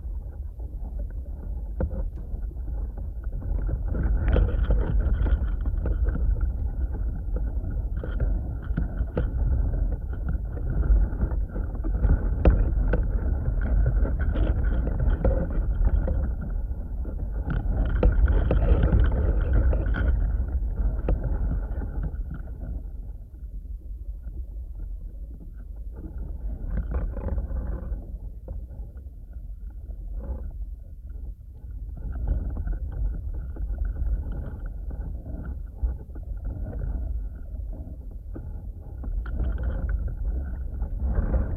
Šlavantai, Lithuania - A pile of cut branches rustling
Dual contact microphone recording of a pile of cut branches, softly brushing against each other. When the wind intensifies, branches rustle louder.